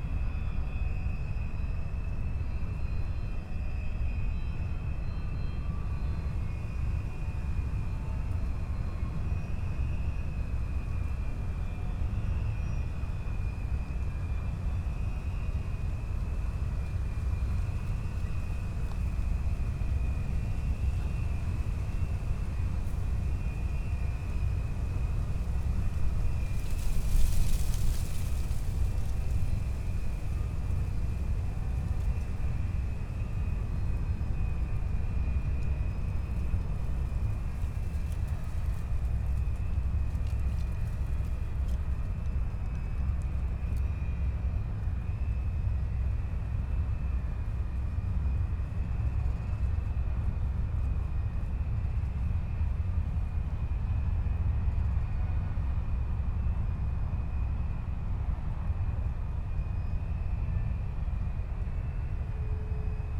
Plänterwald, Berlin, Deutschland - rusty ferris wheel, industrial soundscape, a ship
the rusty ferris wheel starts moving and sqeaking in the wind, sounds from the loading of ships at the nearby power station
(SD702, DPA4060)